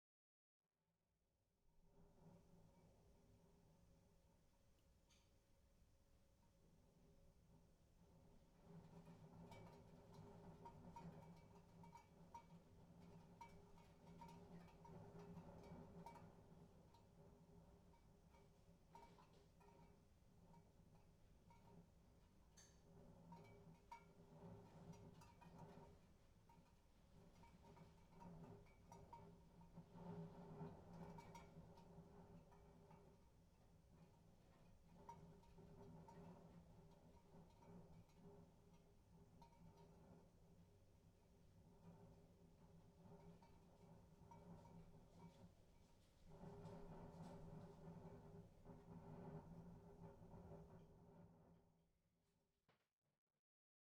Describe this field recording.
Rain and wind heard through my kitchen geyser.